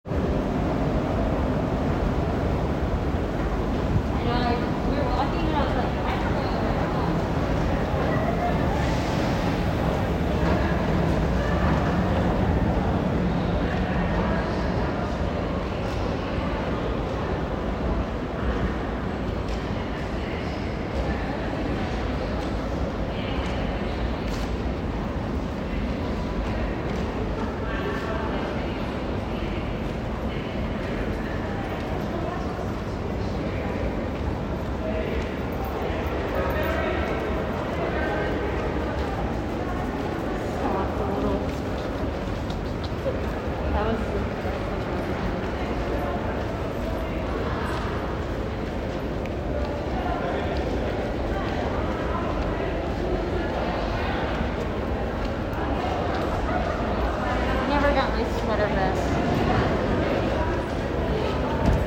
Areos, Athina, Greece - Hadrian's Library
Standing in one of the busiest locations of Hadrian's Library, during a rush hour.